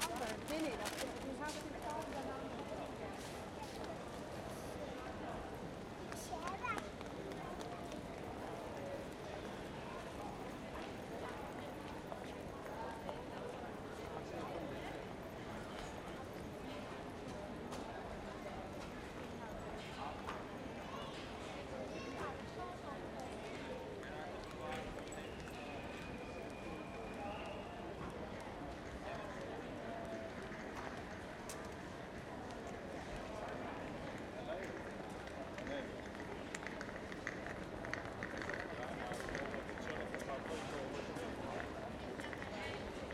Main Station, Utrecht, Niederlande - steps and cases
recorded on a bench, microphone next to the floor - after the sound next to this one...